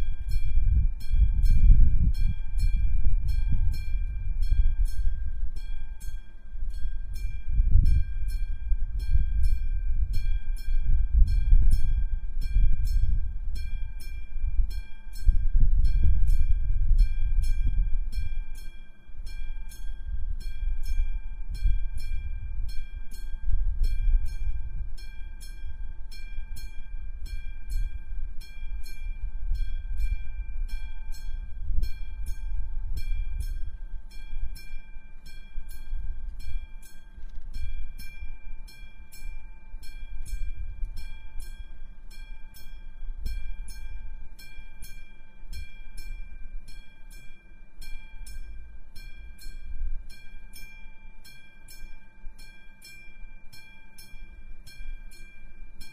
Czech Rep., Olomouc, Litovelska str., grade crossing
a grade crossing at night
Olomouc, Czech Republic